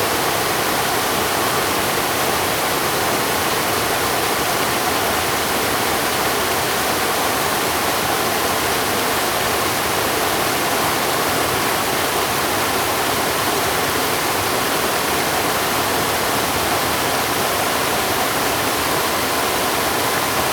五峰旗瀑布, 礁溪鄉大忠村, Yilan County - Waterfalls and rivers
Waterfalls and rivers
Zoom H2n MS+ XY
Yilan County, Taiwan